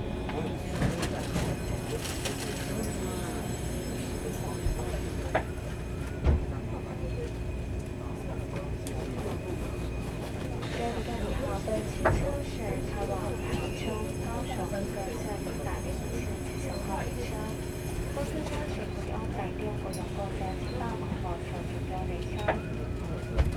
Fengyuan, Taichung - On the train